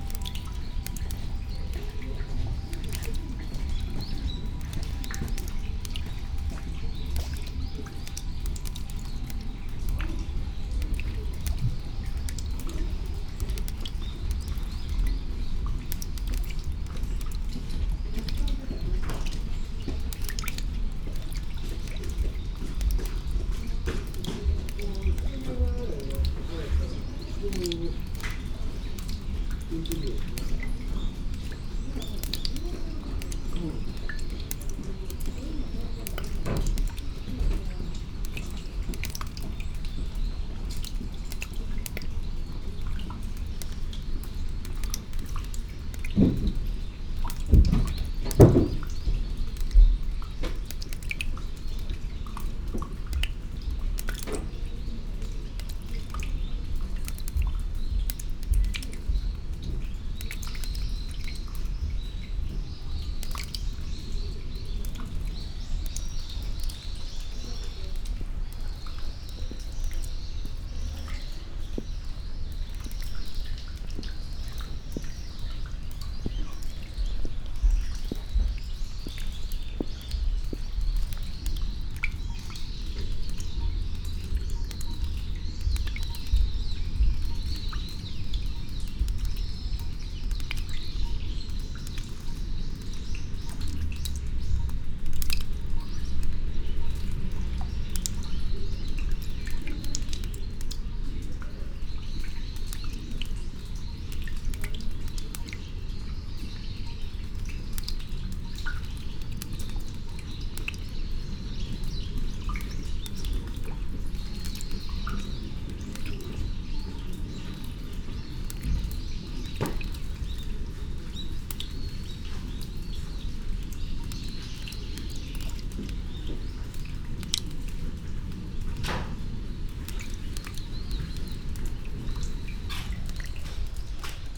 garden, Chishakuin temple, Kyoto - rain drops onto stones and rainwater
gardens sonority
veranda, wooden flour, steps
1 November 2014, 11:01